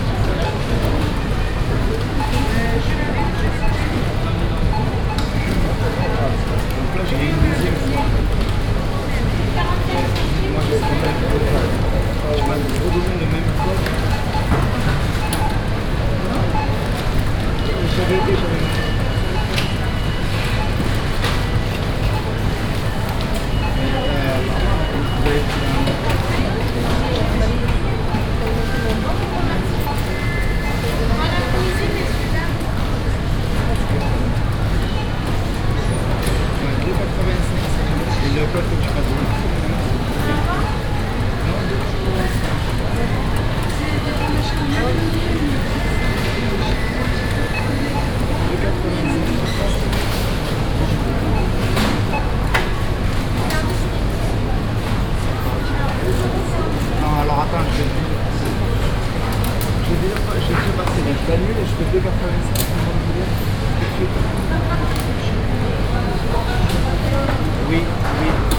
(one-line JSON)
{"title": "orange, supermarket, checkout counter", "date": "2011-08-28 13:44:00", "description": "Inside a huge supermarket at the checkout counter. The sound of beeping digital scanners, shopping wagons and a clerk talking on the phone.\ninternational village scapes - topographic field recordings and social ambiences", "latitude": "44.12", "longitude": "4.84", "altitude": "59", "timezone": "Europe/Paris"}